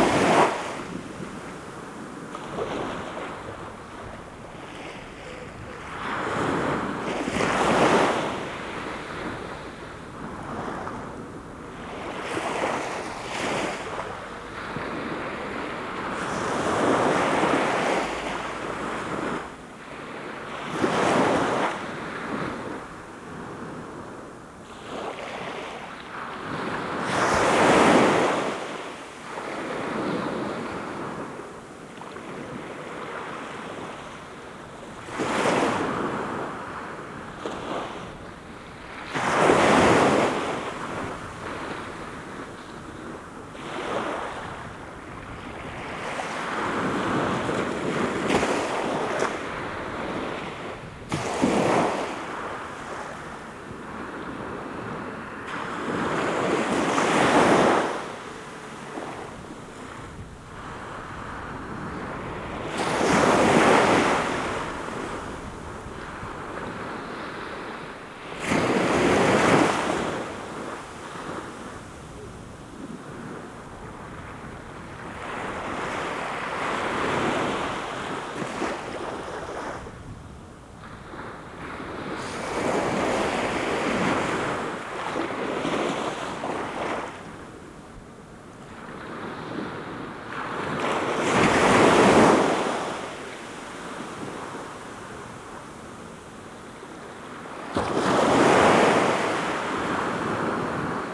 Binaural field recording, waves washing upon the shore